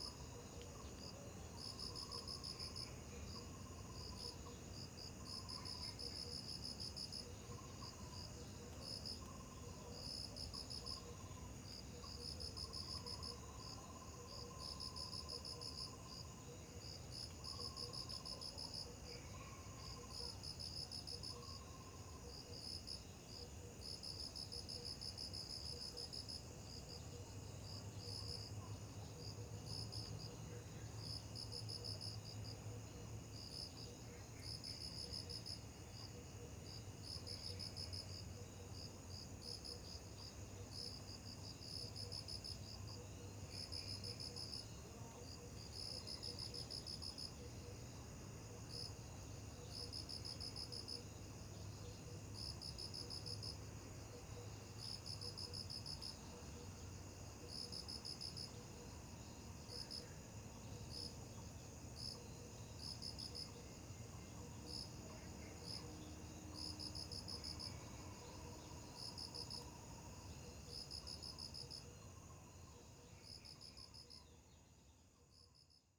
{
  "title": "福安宮, 埔里鎮桃米里 - Facing the valley",
  "date": "2016-04-25 17:25:00",
  "description": "Frogs chirping, Bird sounds, Facing the valley\nZoom H2n MS+XY",
  "latitude": "23.95",
  "longitude": "120.92",
  "altitude": "573",
  "timezone": "Asia/Taipei"
}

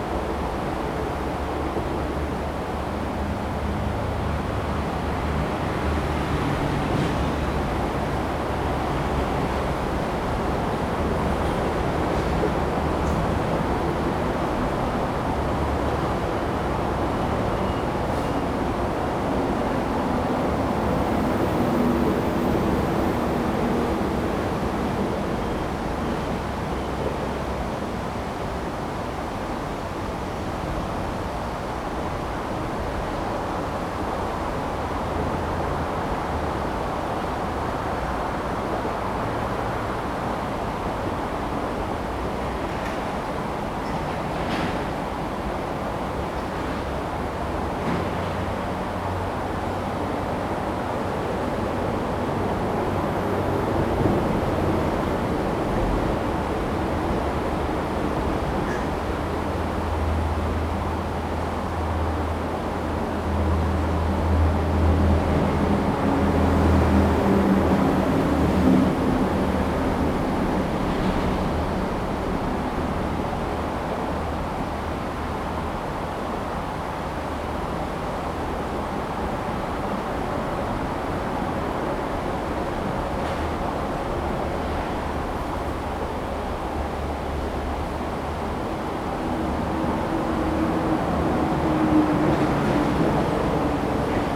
{
  "title": "Dazun Rd., Zhongli Dist. - Under the highway",
  "date": "2017-08-02 14:58:00",
  "description": "Under the highway, stream, traffic sound\nZoom H2n MS+XY",
  "latitude": "24.99",
  "longitude": "121.23",
  "altitude": "109",
  "timezone": "Asia/Taipei"
}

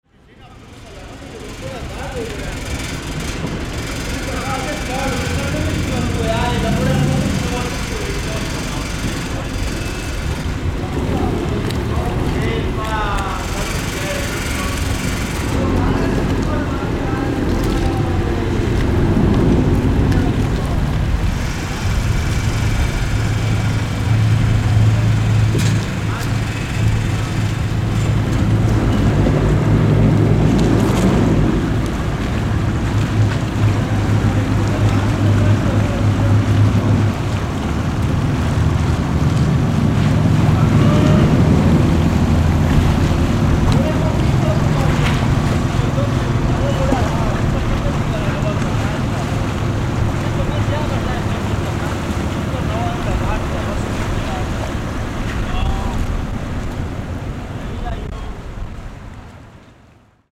{
  "title": "Castello, Venise, Italie - Workers Venezia",
  "date": "2013-11-07 11:50:00",
  "description": "Workers in Venezia, recorded with Zoom H6",
  "latitude": "45.43",
  "longitude": "12.35",
  "timezone": "Europe/Rome"
}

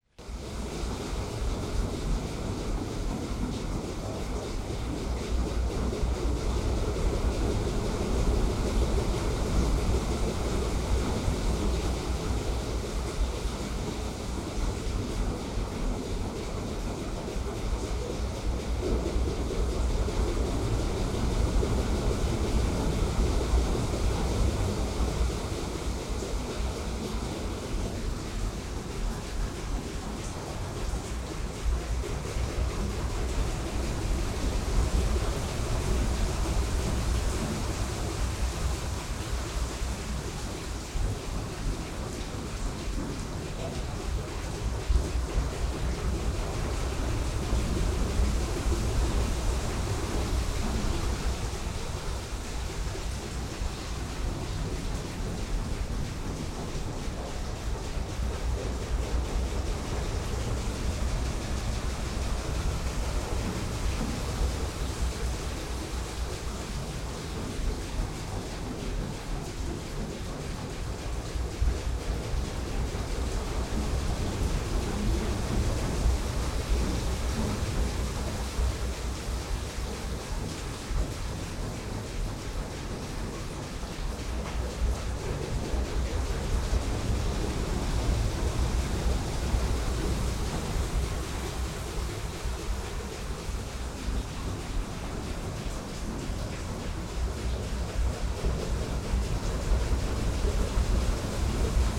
{
  "title": "northville, mi, waterwheel",
  "date": "2011-07-18 04:18:00",
  "description": "northville, michigan waterwheel at historic ford valve plant",
  "latitude": "42.43",
  "longitude": "-83.48",
  "altitude": "238",
  "timezone": "America/Detroit"
}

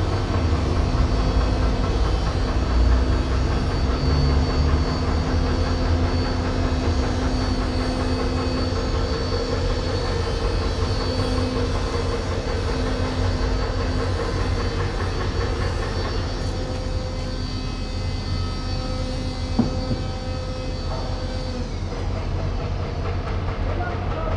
{
  "title": "Yerevan, Arménie - Construction works",
  "date": "2018-09-02 14:00:00",
  "description": "Erevan is a growing city. We are here on the center of a very big construction works. It's not especially an ASMR sound. During all day it sounds like that.",
  "latitude": "40.21",
  "longitude": "44.52",
  "altitude": "1214",
  "timezone": "Asia/Yerevan"
}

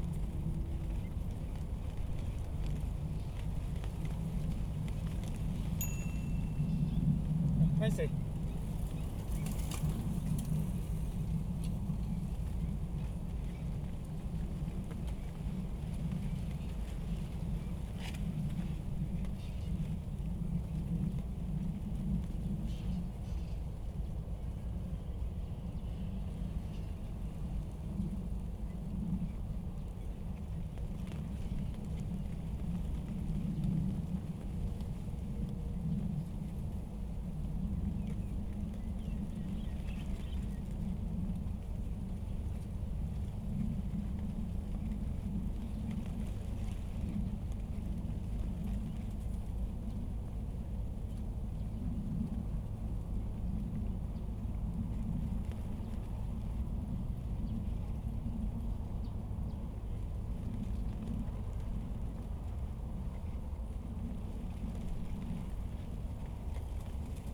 {
  "title": "布袋濕地生態園區, 嘉義縣布袋鎮 - Wind and leaves",
  "date": "2020-08-09 16:46:00",
  "description": "Wetland area, Bird sounds, Wind, Traffic sound, There are planes in the distance, Wind and leaves\nSoundDevice MixPre 6 +RODE NT-SF1 Bin+LR",
  "latitude": "23.36",
  "longitude": "120.18",
  "altitude": "2",
  "timezone": "Asia/Taipei"
}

